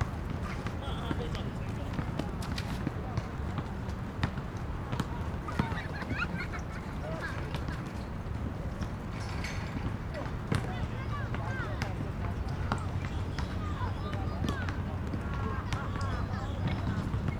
Sanchong District, New Taipei City - play Basketball
Basketball, Rode NT4+Zoom H4n